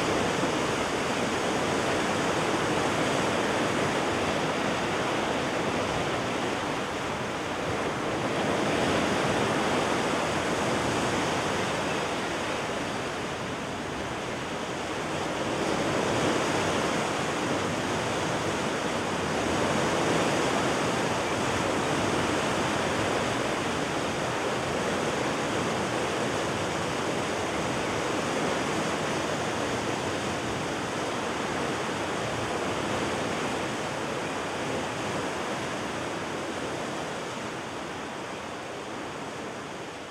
Chem. de la Digue du Smetz, Arques, France - Arques - Écluses des Fontinettes
Arques (Pas-de-Calais)
Écluses des Fontinettes
Le sas est vidé avant le passage d'une péniche.